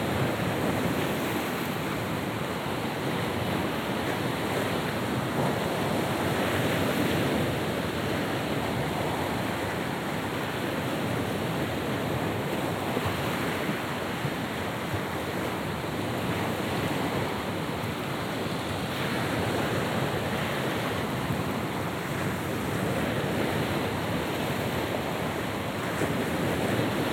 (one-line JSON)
{"title": "Storm. The howling wind, Russia, The White Sea. - Storm. The howling wind.", "date": "2015-06-21 23:10:00", "description": "Storm. The howling wind.\nШтормит, вой ветра.", "latitude": "63.91", "longitude": "36.92", "timezone": "Europe/Moscow"}